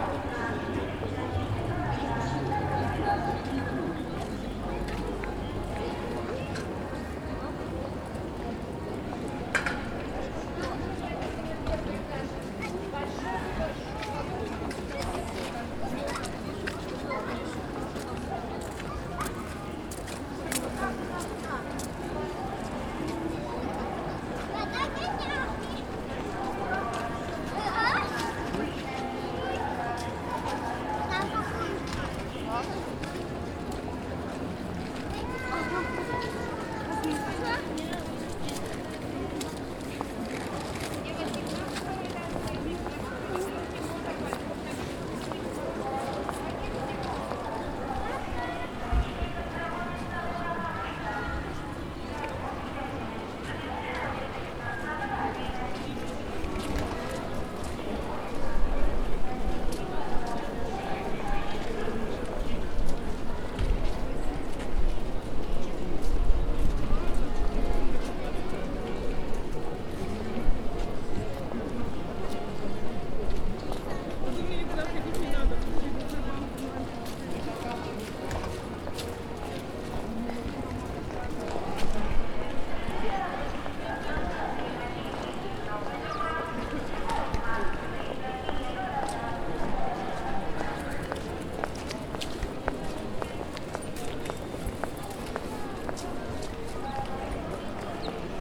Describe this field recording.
pedestrian street in Irkutsk - lots of shops - people walking and resting on benches - music out on megaphones - sales promotion diffused on loudspeakers